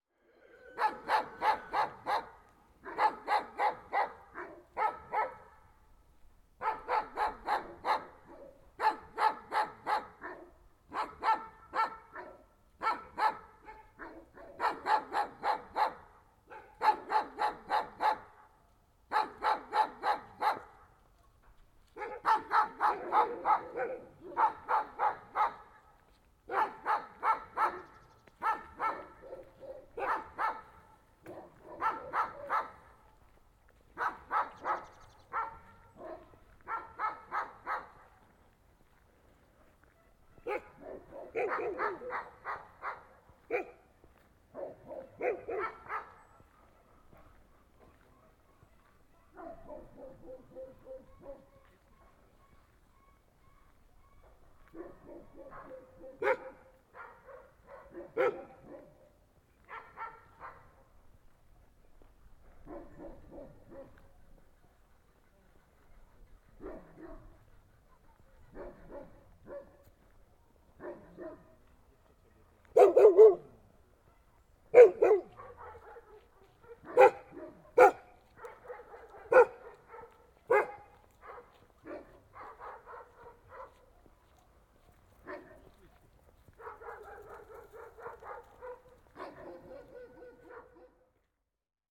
Kruhelska, Przemyśl, Poland - (69) Dogs barking on the way to The Tatars Barrow and The Zniesienie Hill
Binaural recording of dogs barking on the way to The Tatar's Barrow and The Zniesienie Hill.
recorded with Soundman OKM + Sony D100
sound posted by Katarzyna Trzeciak